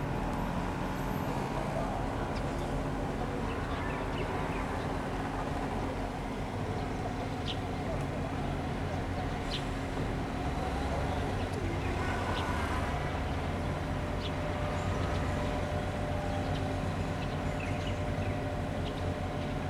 Fengshan Station - Construction noise
Square in front of the station, Sony Hi-MD MZ-RH1, Rode NT4
鳳山區 (Fongshan), 高雄市 (Kaohsiung City), 中華民國, 25 February 2012